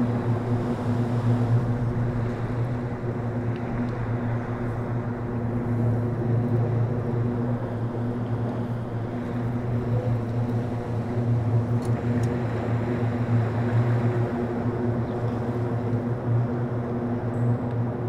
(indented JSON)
{
  "title": "Cullercoats, Sea waves and air flow through found open plastic pipe",
  "date": "2010-01-14 12:31:00",
  "description": "Sea waves and air flow through found open plastic pipe",
  "latitude": "55.02",
  "longitude": "-1.42",
  "altitude": "14",
  "timezone": "Europe/London"
}